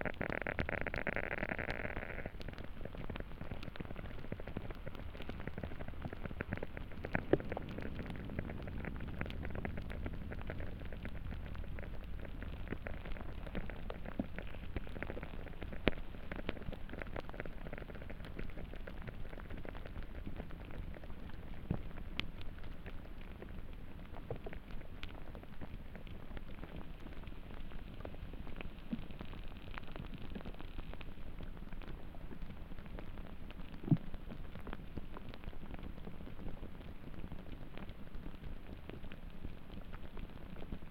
Vaikutėnai, Lithuania, melting snow
Vaikutenai mound. Little islands of last snow melting on the sun. Contact microphones buried under the snow...